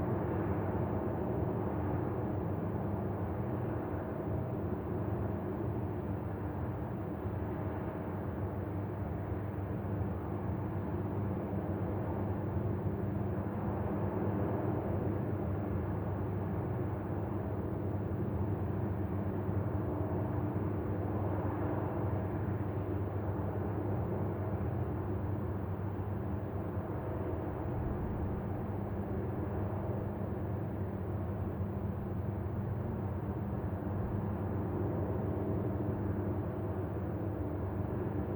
Paradela, Salto de Castro, Portugal Mapa Sonoro do Rio Douro Douro River Sound Map
Fonfría, Zamora, Spain, 11 February 2014, 10:30